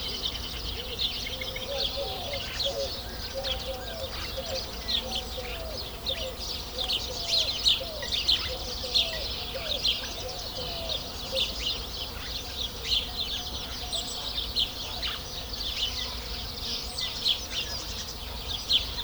Morille (salamanca, ES) Countryside birds, daybreak, mono, rode NTG3, Fostex FR2 LE
Buenavista, Spain, 14 August 2011